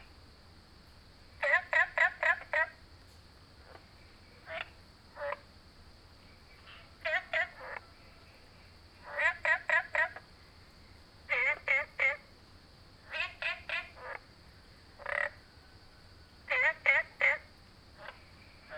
Green House Hostel, Puli Township - Frog chirping
Ecological pool, Frog chirping, Early morning, Crowing sounds
3 September 2015, ~05:00